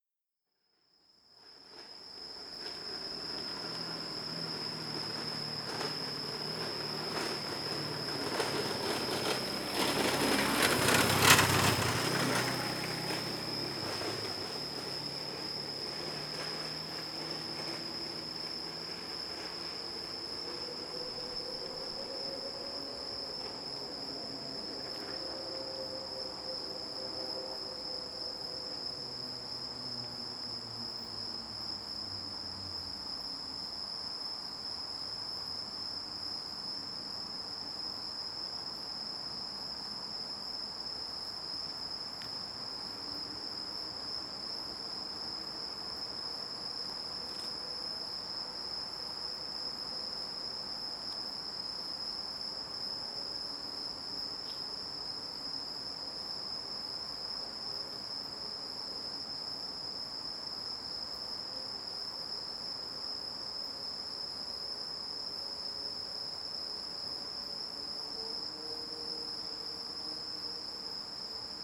대한민국 서울특별시 서초구 우면동 627-1 - Rural Area, Umyeon-dong, Night
Rural Area, Umyeon-dong, Night, automobile passing by
우면동 주택가, 밤, 오토바이